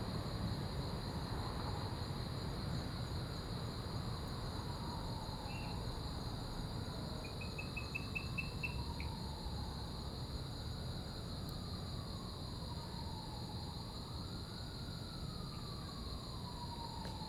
福州山公園, Da'an District 台北市 - Park night
In the park, Sound of insects, Traffic noise
Zoom H2n MS+XY